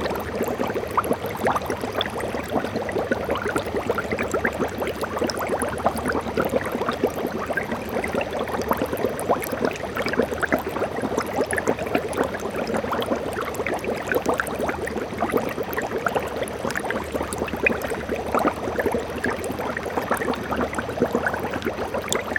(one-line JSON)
{"title": "heinerscheid, cornelyshaff, brewery - heinerscheid, cornelyshaff, fermentation", "date": "2011-09-12 17:57:00", "description": "Now we are in the cellar of the building were the freshly brewed beer is filled in several tanks and proceeds the alcoholic fermentation process. Listen to the the sound of the fermentation process.\nHeinerscheid, Cornelyshaff, Brauerei, Fermentation\nJetzt sind wir im Keller des Gebäudes, wo das frisch gebraute Bier in einige Tanks gefüllt wird und der alkoholische Fermentationsvorgang durchgeführt wird. Lauscht auf das Geräusch des Fermentationsvorganges.\nHeinerscheid, Cornelyshaff, fermentation\nNous sommes maintenant dans la cave du bâtiment où la bière fraîchement brassée est remplie dans diverses cuves et où se produit le processus de fermentation. Écoutons le bruit du processus de fermentation.", "latitude": "50.10", "longitude": "6.09", "altitude": "525", "timezone": "Europe/Luxembourg"}